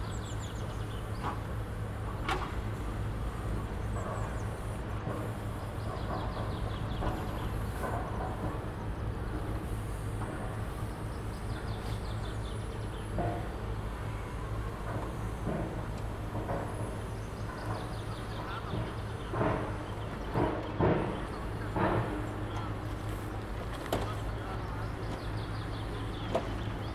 Roncegno TN, Italia - Trasporto tronchi
Zona di stoccaggio tronchi d'albero, carico/scarico e passaggio camion